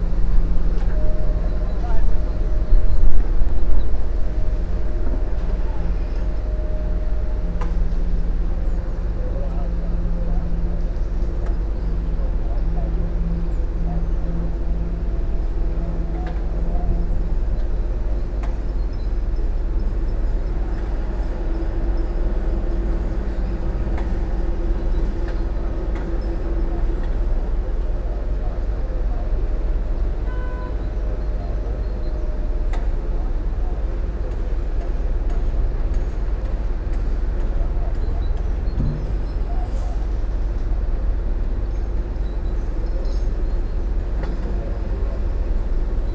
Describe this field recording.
construction site at the Mateckiego street. Once a small and quiet housing complex grew over the years. New apartments are being build all around the area. Construction site sounds bother the inhabitants as the works start around five in the morning, also at weekends. Only the deer that live on the grassland nearby don't seem to care. Even when there are heavy thumps and noise from the site, they don't even wake up from their sleep. The construction impacts the inhabitants on many levels. Grass spots where people used to walk their dogs have been fenced to store the building materials. A makeshift soccer field has been removed as well. Usual sounds of kids playing soccer also therefore vanished from regular weekend soundscape. (roland r-07)